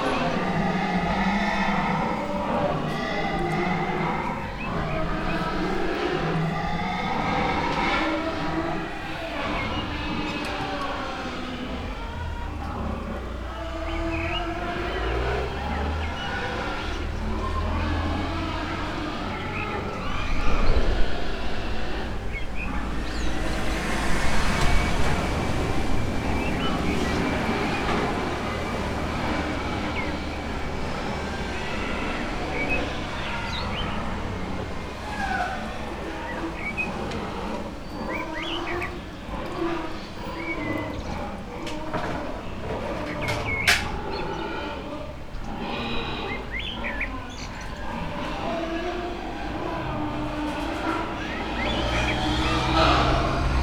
Sao Goncalo, levada towards Camacha - entrance to animal barn
at the entrance to unsettling animal barn. it sounded as if all evil was breaking loose inside.
Portugal, 6 May 2015, ~14:00